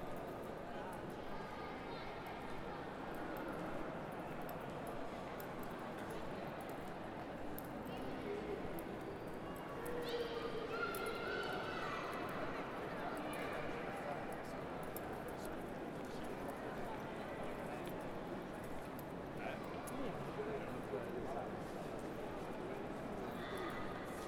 Piazza della Scala, Milano, Italia - solstizio d'estate - festa della musica
il tram, le macchine, le note del pianoforte di Marius Jonathan, le rondini, le persone, i bambini.... la notte del solstizio d'estate